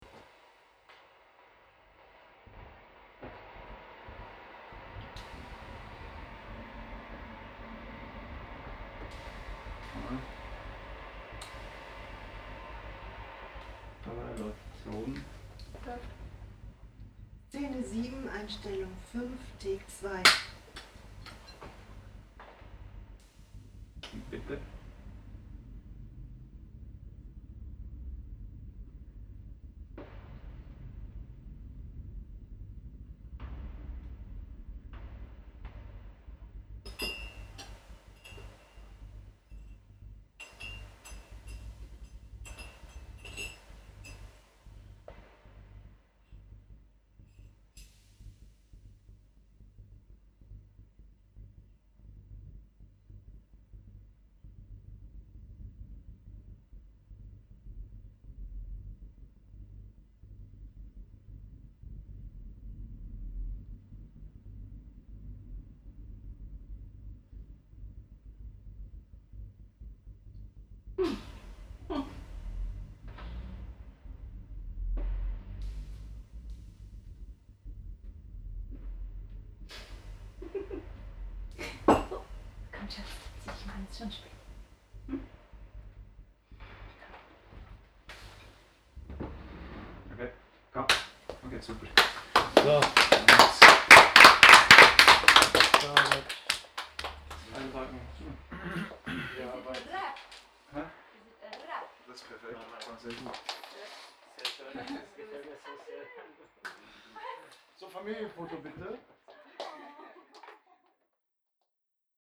Buchforst, Köln, Deutschland - Cologne, Buchforststraße 102, movie set
Inside a small living room of a rentable flat during the shot of a movie. The voice of the editor and his time, then the silence of the team, while the actors perform the last take of the day. Finally the sound of the team applause.
soundmap nrw - social ambiences, art spaces and topographic field recordings
Deutschland, European Union, 11 July, 18:00